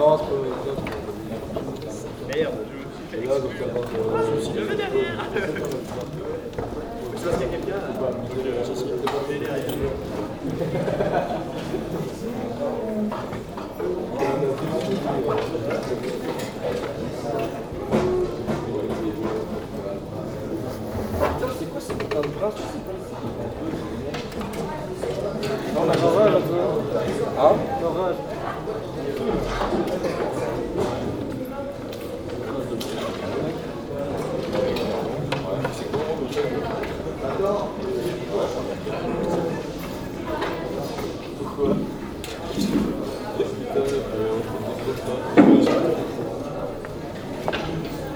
Quartier du Biéreau, Ottignies-Louvain-la-Neuve, Belgique - Pause between two courses
A pause between two courses in the big auditoire called Croix du Sud.
Ottignies-Louvain-la-Neuve, Belgium